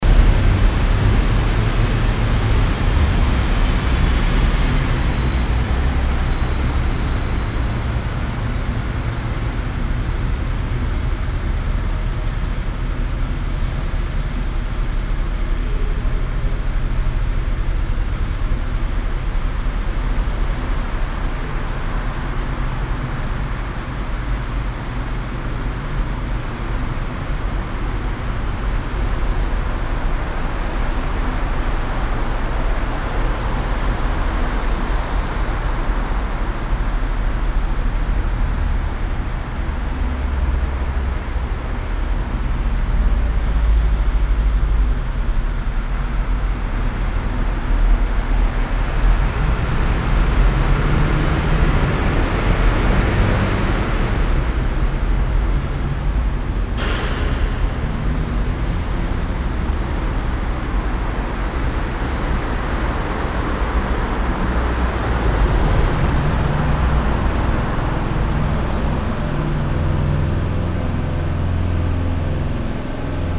cologne, tunnel, trankgasse - koeln, tunnel, trankgasse
verkehr im tunnel, mittags
soundmap nrw: